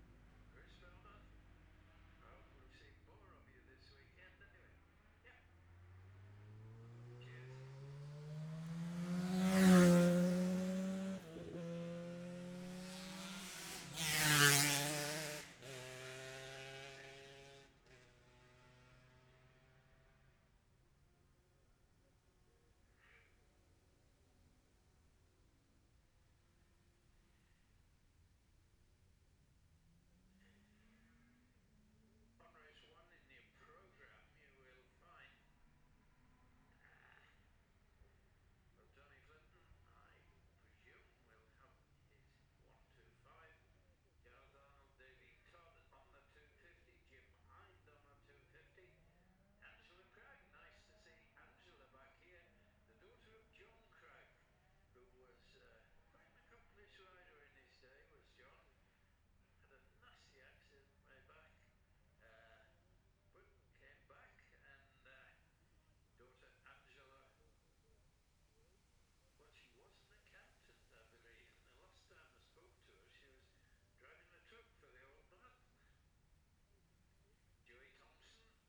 {"title": "Jacksons Ln, Scarborough, UK - gold cup 2022 ... lightweight practice ...", "date": "2022-09-16 09:41:00", "description": "the steve henshaw gold cup ... lightweight practice ... dpa 4060s clipped to bag to zoom h5 ...", "latitude": "54.27", "longitude": "-0.41", "altitude": "144", "timezone": "Europe/London"}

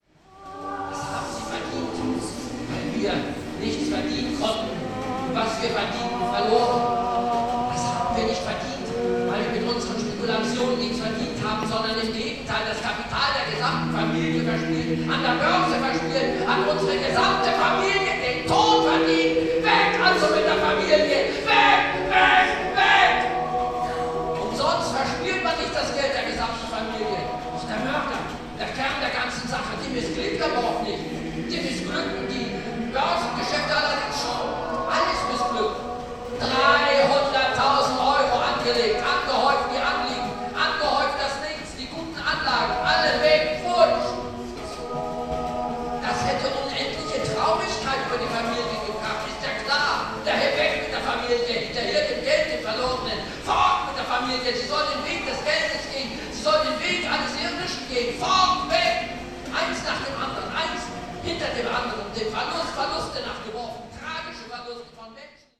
{"title": "schauspiel köln - chor der kleinanleger / choir of small investors", "date": "2009-06-04 22:50:00", "description": "chor der kleinanleger aus elfriede jelineks theaterstück über die finazkrise - die kontrakte des kaufmanns -\nchoir of small investors, theater play by elfriede jelinek about the finacial crisis", "latitude": "50.94", "longitude": "6.95", "altitude": "61", "timezone": "Europe/Berlin"}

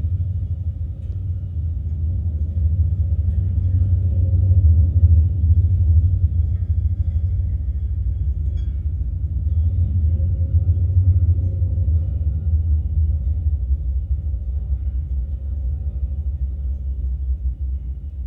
{"title": "chimney guide wire, Mooste", "date": "2010-06-15 18:06:00", "description": "recorded with a contact mic", "latitude": "58.16", "longitude": "27.20", "altitude": "51", "timezone": "Europe/Tallinn"}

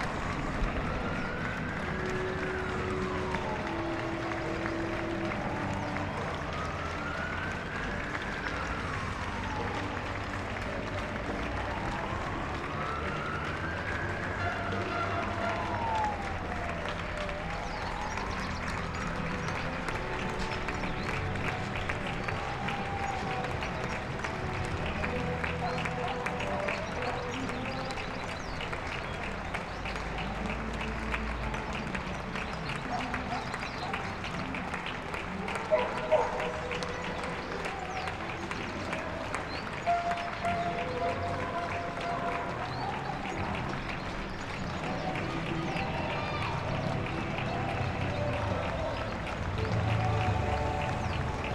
{"title": "Paseo de santa maría de la cabeza, Madrid, España - applause 20.00", "date": "2020-04-24 20:00:00", "description": "Hora de los Aplausos", "latitude": "40.39", "longitude": "-3.71", "altitude": "599", "timezone": "Europe/Madrid"}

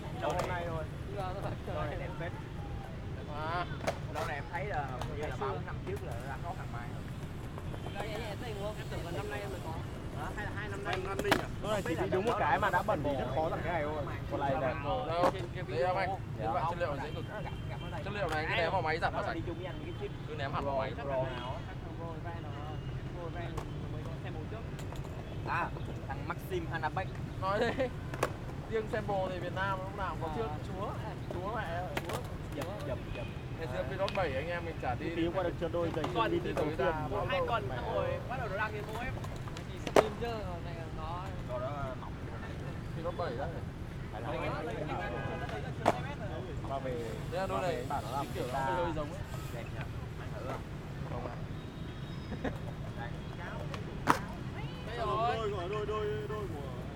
{"date": "2019-10-19 16:48:00", "description": "SKATER IN VIETNAM, RECORDING WITH ZOOM H624", "latitude": "45.78", "longitude": "4.81", "altitude": "171", "timezone": "Europe/Paris"}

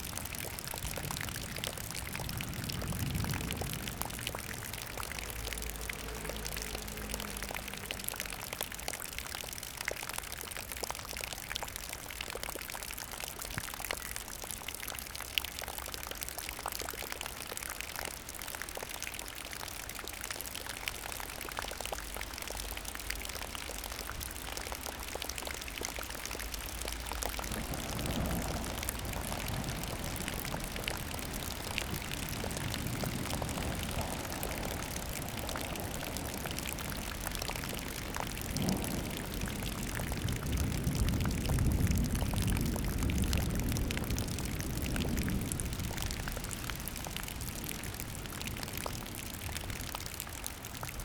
{"title": "Innstraße, Innsbruck, Österreich - Puddle at the hut of vogelweide", "date": "2018-06-06 16:56:00", "description": "vogelweide, waltherpark, st. Nikolaus, mariahilf, innsbruck, stadtpotentiale 2017, bird lab, mapping waltherpark realities, kulturverein vogelweide", "latitude": "47.27", "longitude": "11.39", "altitude": "577", "timezone": "Europe/Vienna"}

